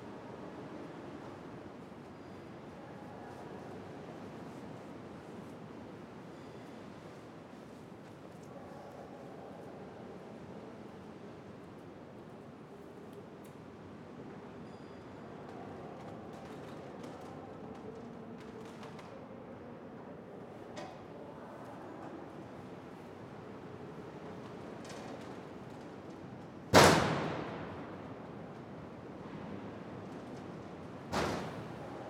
Rue des Tribunaux, Saint-Omer, France - St-Omer-Cathédrale
Cathédrale de St-Omer - intérieur
Jour de grand vent
ambiance.
Hauts-de-France, France métropolitaine, France